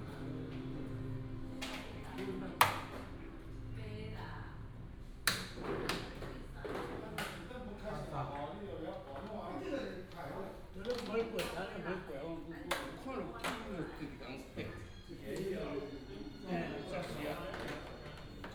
彰化聖王廟, Changhua City - play chess
Inside the temple, Bird call, The old man is playing chess